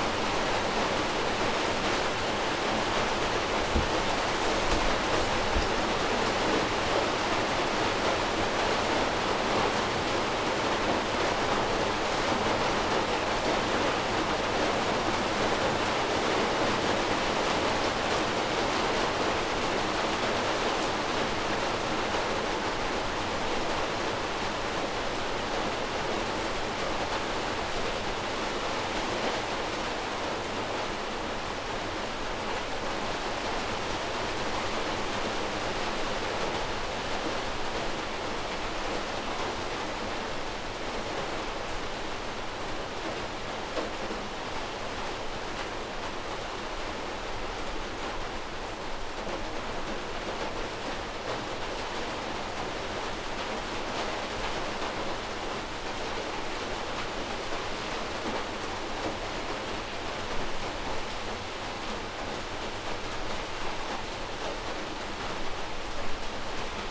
After two soggy days hiking, this shelter was so welcome.
Recorded on LOM Mikro USI's and Sony PCM-A10.